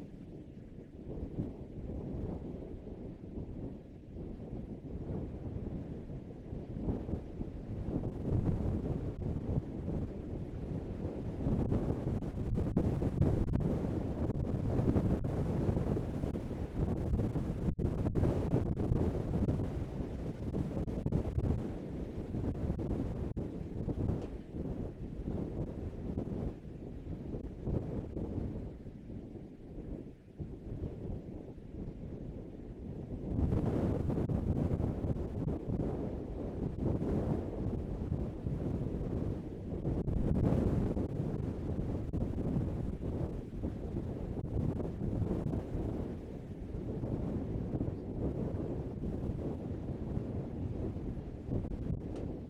Hong Kong, Tai Mo Shan, 大帽山頂直升機坪 - Tai Mo Shan
Tai Mo Shan is the highest point in Hong Kong with 957m height, having a sharp peak and steep cliffs around. The mountain has resistant to weathering and erosion as it is formed of volcanic rocks. You can hear nothing but wind on the cloudy windy day.
大帽山957米，是香港最高的山，山形尖錐，四面陡峭，屬火成巖地質，具有一定抗風化侵蝕能力。大霧大風之日，除了風聲甚麼也聽不到。
#Heavy wind, #Wind
香港 Hong Kong, China 中国, October 2018